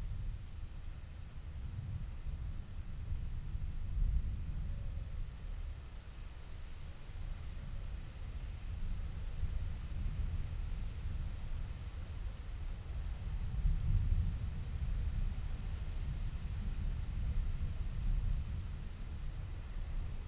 {
  "title": "Luttons, UK - inside church ... outside thunderstorm ...",
  "date": "2018-07-26 18:00:00",
  "description": "inside church ... outside thunderstorm ... open lavalier mics on T bar on mini tripod ... background noise ...",
  "latitude": "54.12",
  "longitude": "-0.54",
  "altitude": "85",
  "timezone": "Europe/London"
}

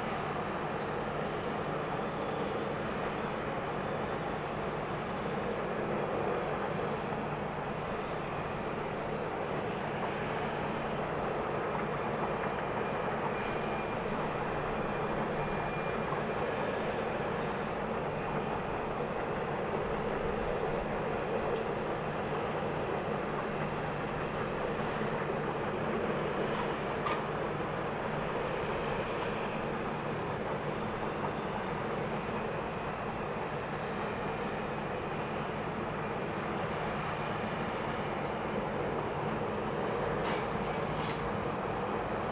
{
  "title": "Shannonbridge, Central Termoelèctrica",
  "date": "2009-07-14 23:34:00",
  "description": "Peat-Fired Power Station at night",
  "latitude": "53.28",
  "longitude": "-8.05",
  "altitude": "37",
  "timezone": "Europe/Dublin"
}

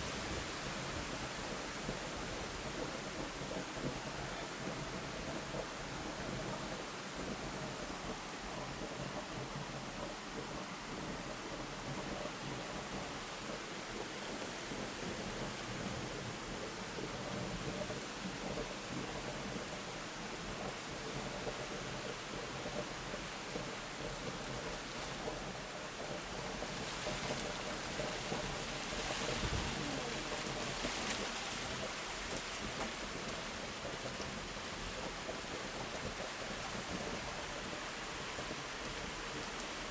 {
  "title": "koeln, beginning thunderstorm - koeln, descending thunderstorm, very hard rain",
  "description": "recorded june 22nd, 2008, around 10 p. m.\nproject: \"hasenbrot - a private sound diary\"",
  "latitude": "50.97",
  "longitude": "6.94",
  "altitude": "50",
  "timezone": "GMT+1"
}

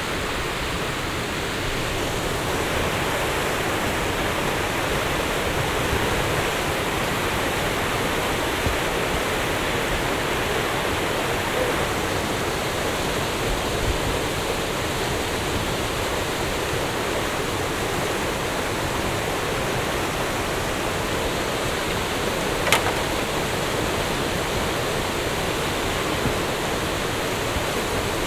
{
  "title": "lippstadt, am siek, canou drivers on the river",
  "description": "a group of young canou drivers rehearse loopings with their small kajak boaats\nsoundmap nrw - social ambiences and topographic field recordings",
  "latitude": "51.68",
  "longitude": "8.34",
  "altitude": "77",
  "timezone": "Europe/Berlin"
}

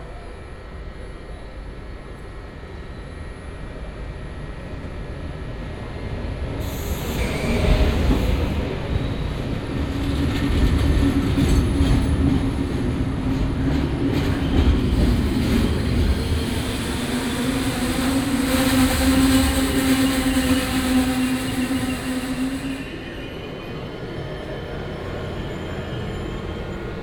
{
  "title": "Rome, Roma Trastevere station - platform 2",
  "date": "2014-09-03 09:04:00",
  "description": "(binaural) train arriving, idling and departing on one pair of tracks. second train only passing on the other side. their hum makes a storm of pulsing, bleeping sounds. announcements about delayed trains and sound coming form a cafe on the other side of the tracks",
  "latitude": "41.87",
  "longitude": "12.47",
  "altitude": "21",
  "timezone": "Europe/Rome"
}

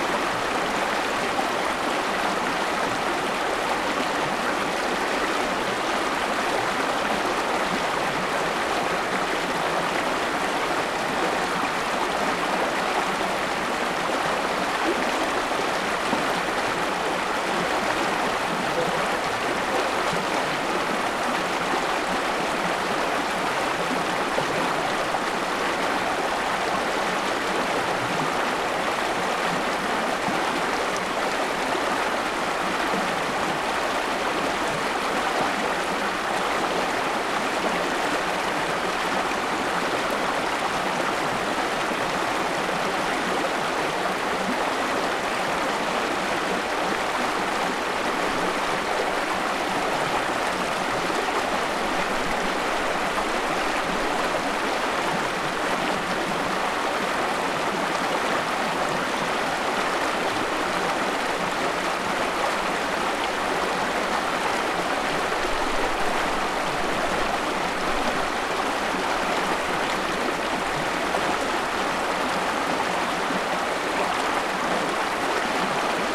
{
  "title": "geesow: salveymühle - the city, the country & me: weir",
  "date": "2014-01-03 16:28:00",
  "description": "weir at salvey creek\nthe city, the country & me: january 3, 2014",
  "latitude": "53.25",
  "longitude": "14.36",
  "timezone": "Europe/Berlin"
}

